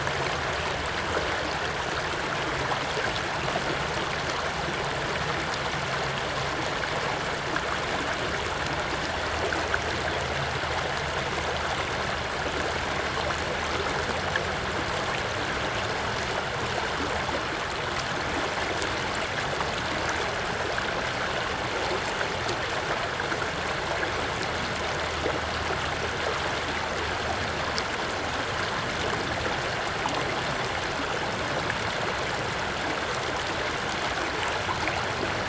Cedar Creek Park, Parkway Boulevard, Allentown, PA, USA - Stream
A flowing stream through a park just outside of campus. This stream is a great place to sit and reflect, and this sound bite hopefully carries that calming atmosphere to you.